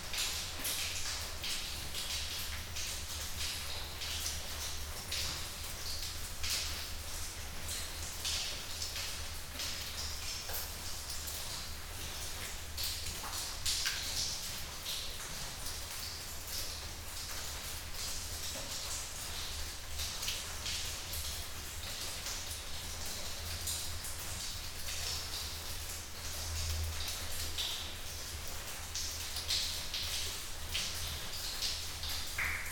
Unnamed Road, Crickhowell, UK - Inside the cave
Leaving a Sony PCM-A10 and some LOM MikroUSI's in a cave in the Brecon Beacons.